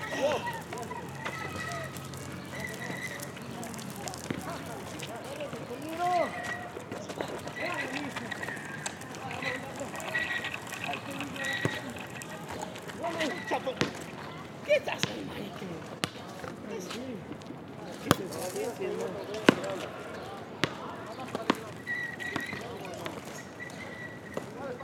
New York, NY, USA - Volleyball match in Evergreen Park, Queens
Sounds from a volleyball match in Evergreen Park, Queens.
United States, 2 May 2022, 18:35